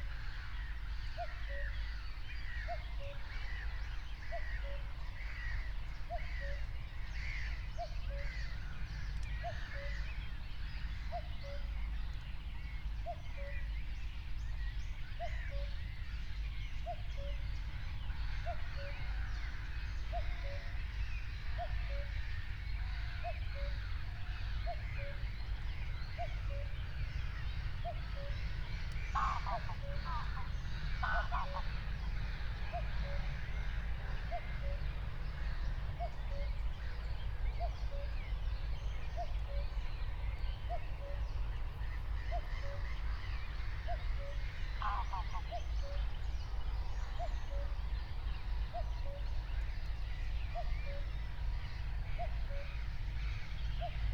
04:00 Berlin, Buch, Moorlinse - pond, wetland ambience